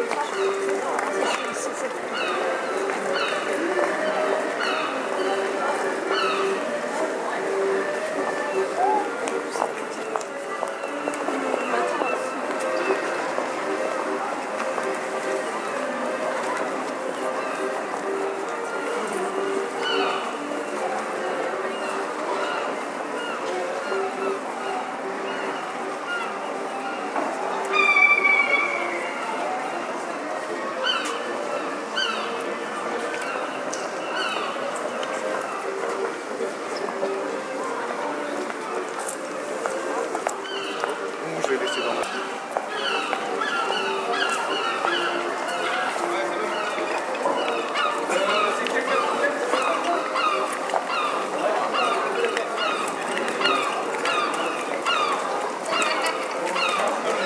tondatei.de: boulogne, einkaufstraße, akkordeonspieler
Boulogne, France, 30 December 2010, ~17:00